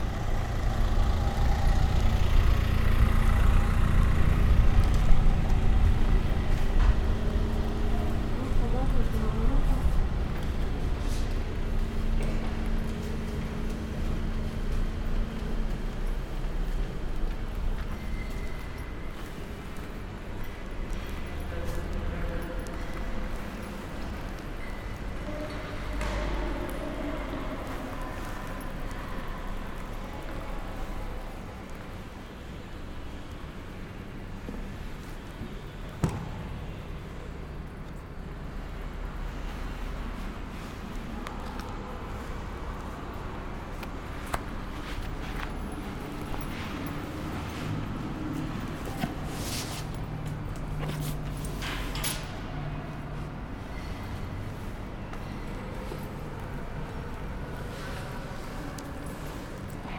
Bahnhofstraße, Eisenach, Germany - Eisenach ambience - inside and outside train station
Reverberant interior space, vehicle and people.
Recording gear: LOM MikroUsi Pro, Zoom F4 field recorder.
Thüringen, Deutschland, July 2020